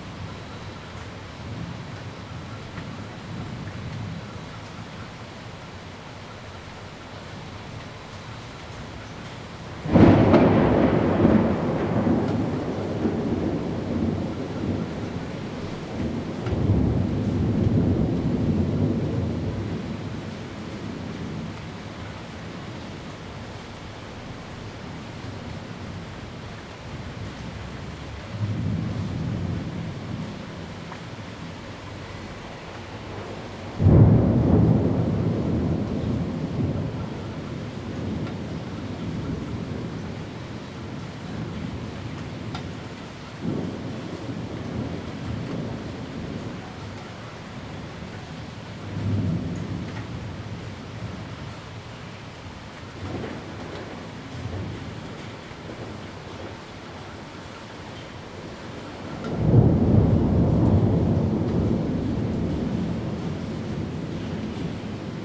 Afternoon thunderstorms
Zoom H2n MS+XY+ Spatial audio
Bade District, Taoyuan City, Taiwan